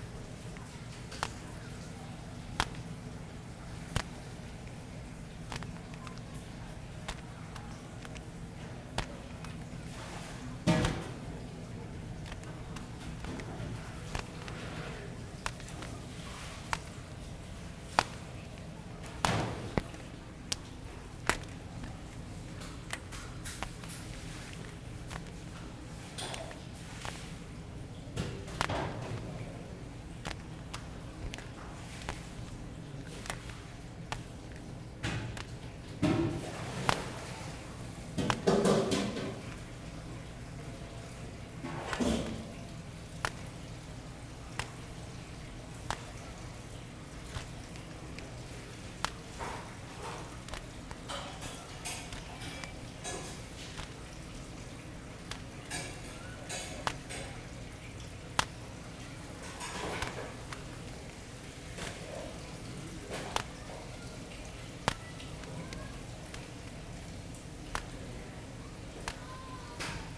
Houliao Elementary School, Fangyuan Township - In the kitchen
The wind and the sound of plastic sheeting, Kitchen sounds, Zoom H6